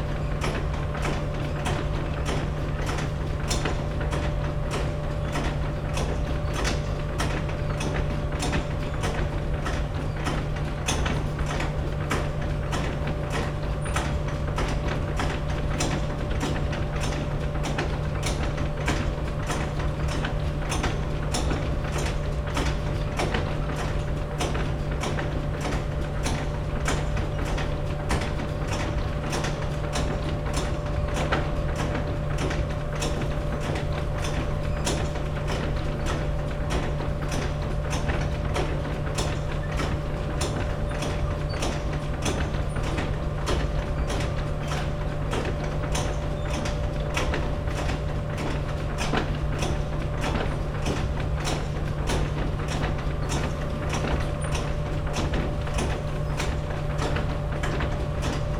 Stau/Hunte, Oldenburg - animal food factory at night
Oldenburg, river Hunter, Agravis food factory, conveyer belt and drone at night
(Sony PCM D50, DPA4060)
Oldenburg, Germany, 15 September